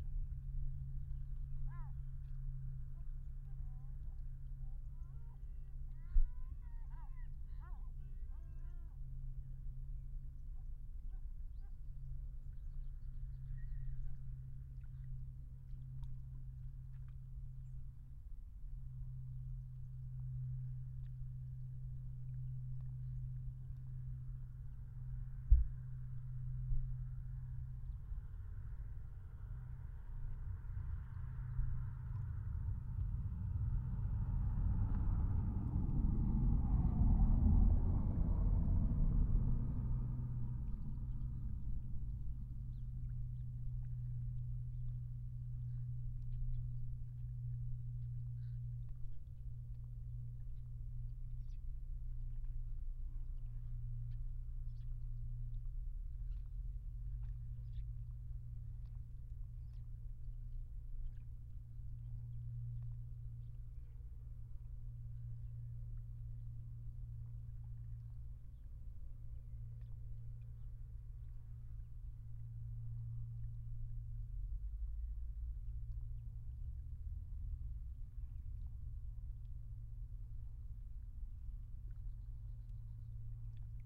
Etang des Vaccarès, eastern shore, Saintes-Maries-de-la-Mer, Frankreich - Flamingoes, waves and traffic
On the eastern shore of the Etang des Vaccarès at noon. In between various sounds of cars and motorbikes passing on the gravel road behind, sounds of waves and calls of the distant flamingoes can be heard. Binaural recording. Artificial head microphone set up on some rocks on the shore, about 3 meters away from the waterline. Microphone facing west. Recorded with a Sound Devices 702 field recorder and a modified Crown - SASS setup incorporating two Sennheiser mkh 20 microphones.
France métropolitaine, France, October 2021